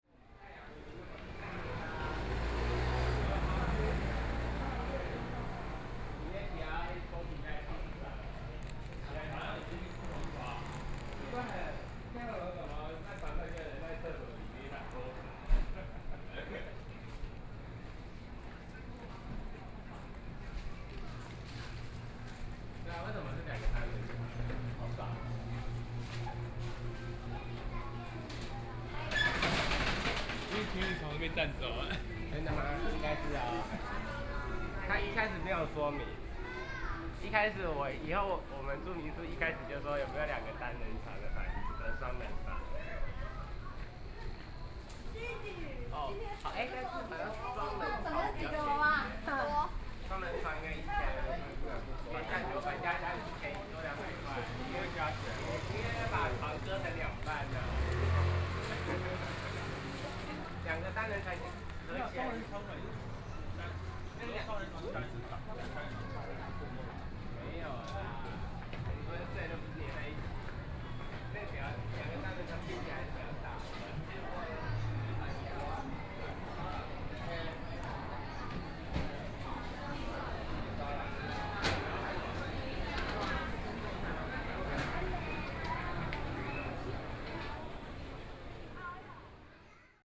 Zhentai St., Luodong Township - walking in the Street
walking in the Street, walking in the Night Market, Traffic Sound
Yilan County, Taiwan, 27 July 2014